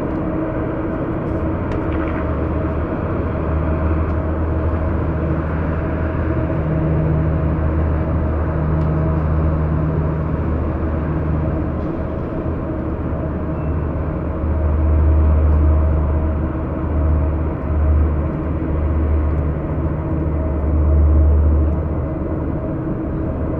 Port Richmond, Staten Island
night drones from the sewage works